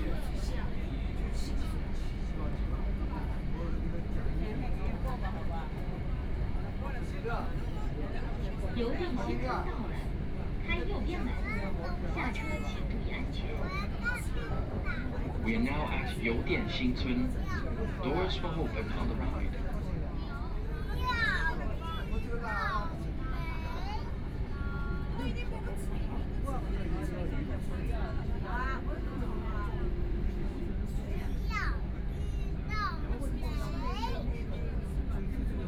{"title": "Hongkou District, Shanghai - Line 10 (Shanghai Metro)", "date": "2013-11-25 13:03:00", "description": "from Siping Road station to Hailun Road station, Binaural recording, Zoom H6+ Soundman OKM II", "latitude": "31.26", "longitude": "121.49", "altitude": "16", "timezone": "Asia/Shanghai"}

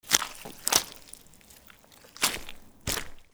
{"title": "walking in mid - Wet mud Shoes", "date": "2012-10-18 18:09:00", "description": "walking through the muddy forrest lane", "latitude": "48.43", "longitude": "10.04", "altitude": "531", "timezone": "Europe/Berlin"}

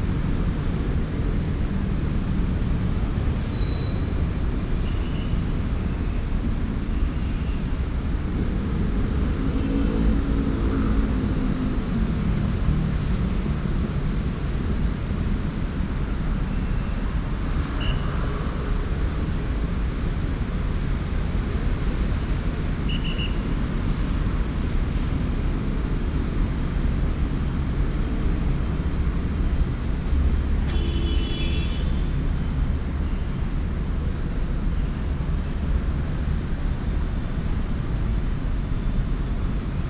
{"title": "The University Promenade, Taipei city - square", "date": "2016-03-04 18:04:00", "description": "Traffic Sound, square", "latitude": "25.02", "longitude": "121.53", "altitude": "19", "timezone": "Asia/Taipei"}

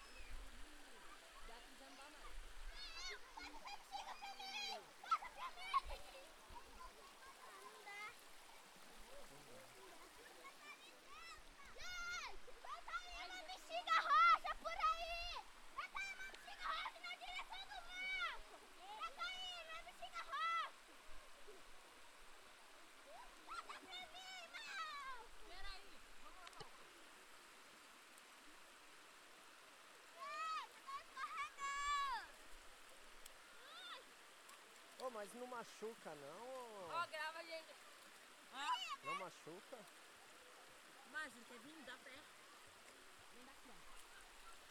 {"title": "Unnamed Road, Lagoinha - SP, 12130-000, Brasil - Kids at the waterfall", "date": "2019-03-23 11:00:00", "description": "Tascam DR-40", "latitude": "-23.14", "longitude": "-45.15", "timezone": "GMT+1"}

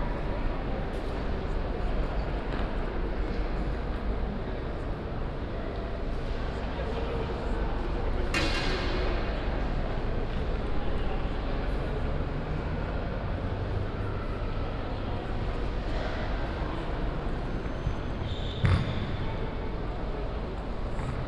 {"title": "Garer Quartier, Lëtzebuerg, Luxemburg - Luxemburg, main station, hall", "date": "2015-06-25 19:15:00", "description": "Inside the hall of the main station of Luxemburg. The sound of voices, rolling suitcases and the deep sound waves of trains arriving at the nearby platforms resonating in the high ceiling space.\ninternational city soundmap - topographic field recordings and social ambiences", "latitude": "49.60", "longitude": "6.13", "altitude": "287", "timezone": "Europe/Luxembourg"}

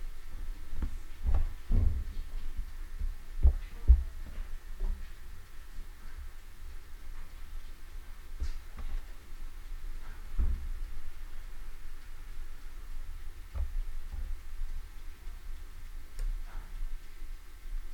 {"title": "Ave. S, Seattle, WA, USA - Purple Glass (Underground Tour 4)", "date": "2014-11-12 11:44:00", "description": "Between Schwabacher Building and former Scandinavian-American Bank (Yesler Building). Group heads towards walk-in vault. Standing directly underneath purple glass in sidewalk. \"Bill Speidel's Underground Tour\" with tour guide Patti A. Stereo mic (Audio-Technica, AT-822), recorded via Sony MD (MZ-NF810).", "latitude": "47.60", "longitude": "-122.33", "altitude": "21", "timezone": "America/Los_Angeles"}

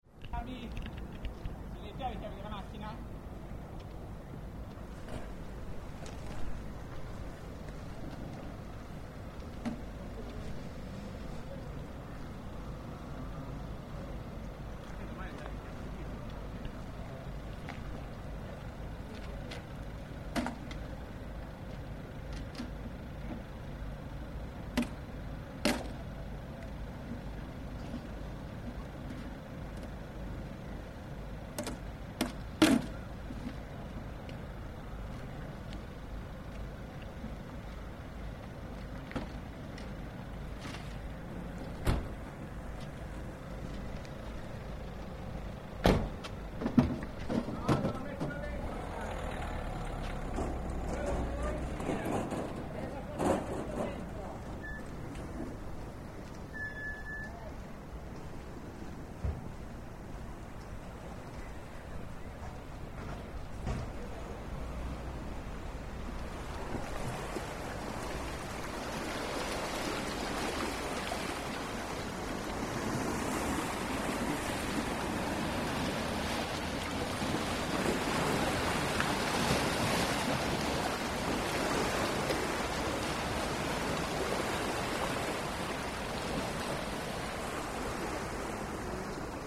{"title": "Rimini, the last dicotheque on the mainland, out of the season", "date": "2011-04-05 18:46:00", "description": "Rimini is a summer city. Beaches, discos, ice cream. Before or after the season, there are seagulls, there are waves, there are fishermen.", "latitude": "44.08", "longitude": "12.58", "timezone": "Europe/Rome"}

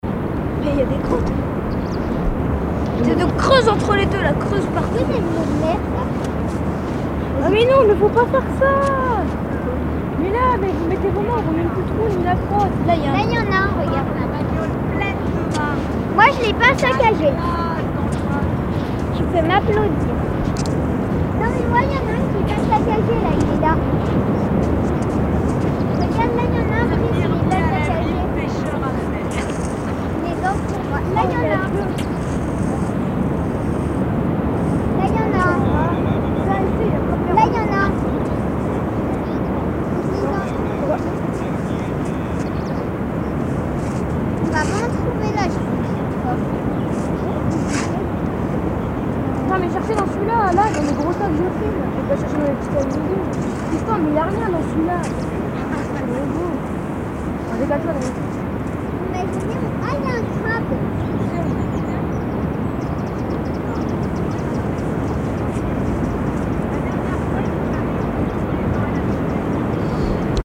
{"title": "Vauville, France - Children at Vauville", "date": "2014-10-29 18:12:00", "description": "Children looking for worms in the sand, Zoom H6, 3 canon microphones (Rode, Neumann, Akg), on the beach…", "latitude": "49.64", "longitude": "-1.86", "altitude": "95", "timezone": "Europe/Paris"}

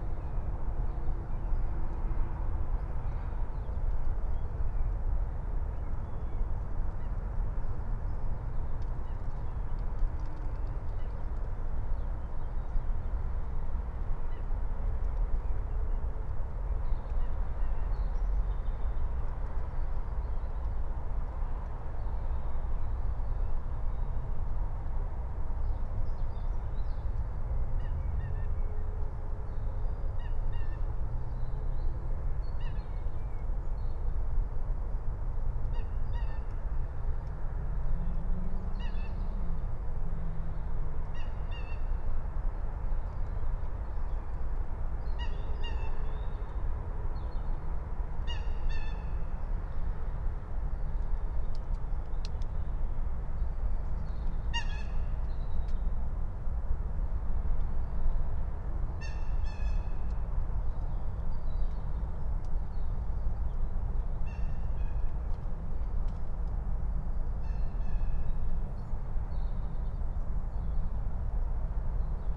{"title": "Friedhof Columbiadamm, Berlin - cemetery ambience", "date": "2021-03-31 05:00:00", "description": "05:00 early morning Friedhof Columbiadamm, Berlin, traffic drone, an owl, first birds.\n(remote microphone: PUI AOM 5024 / IQAudio/ RasPi Zero/ 4G modem)", "latitude": "52.48", "longitude": "13.41", "altitude": "51", "timezone": "Europe/Berlin"}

